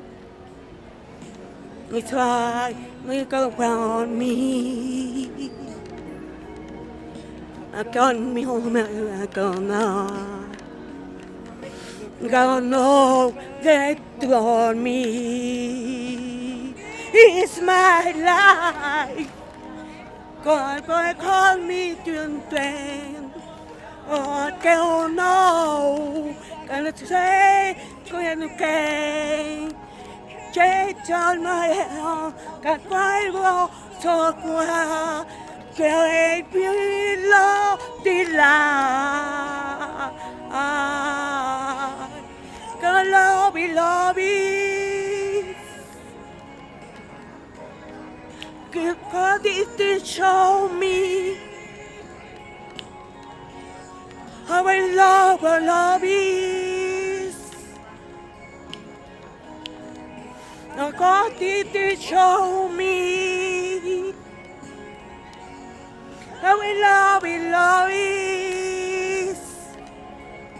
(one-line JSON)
{"title": "de Mayo, Centro histórico de Puebla, Puebla, Pue., Mexique - Puebla - 5 de Mayo", "date": "2019-09-19 14:40:00", "description": "Puebla (Mexique)\nQuelques minutes avec Clara \"Street Artist\" de Puebla", "latitude": "19.05", "longitude": "-98.20", "altitude": "2154", "timezone": "America/Mexico_City"}